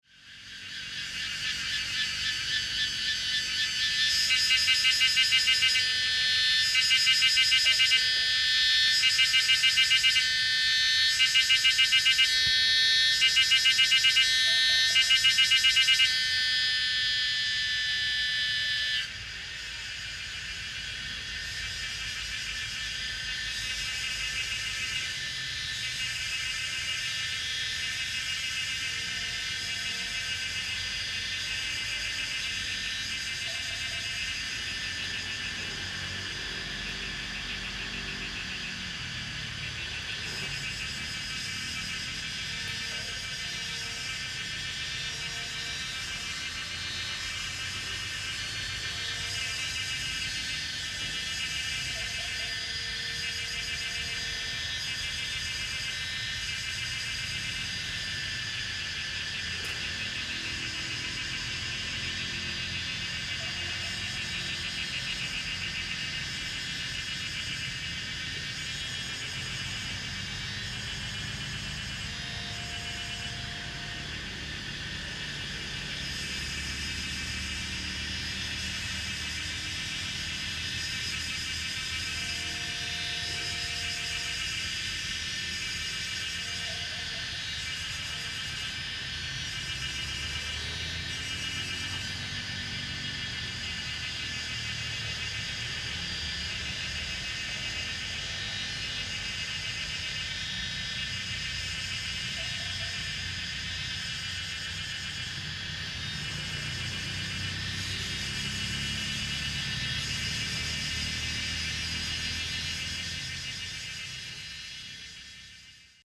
National Chi Nan University, Puli Township - In the woods
Cicadas cry, Bird sounds, Traffic Sound, In the woods, Frogs chirping
Zoom H2n MS+XY